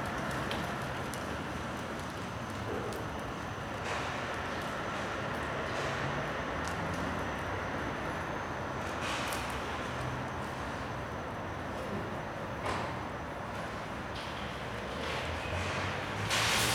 Poznan, Piatkowo district, parking lot of Makro wholesale outlet - parking lot
walking around cars the parking lot. shoppers moving around with shopping trolleys, unloading goods, cars arriving and leaving, phone conversations.